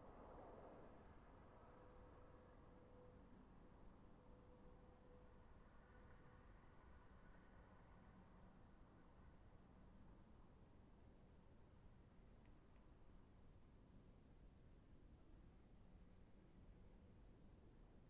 Haltern - Crickets at night
Crickets in a summer night.